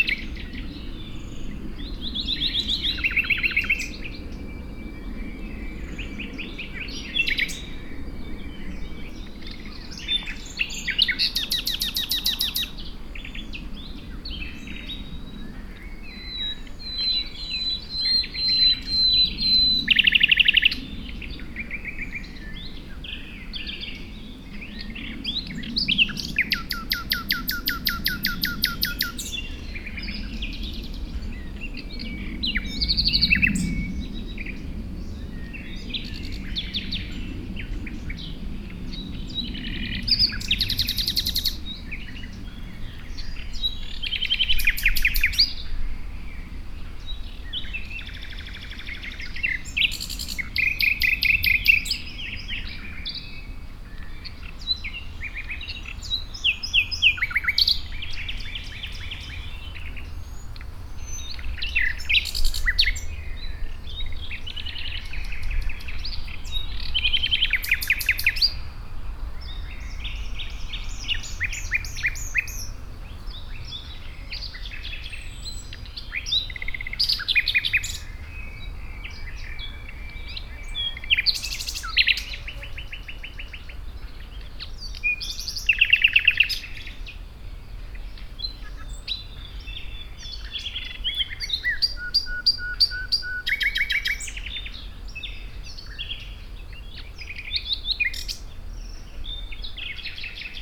Niévroz, birds near the dead river.
Niévroz, les oiseaux au printemps près de la lône.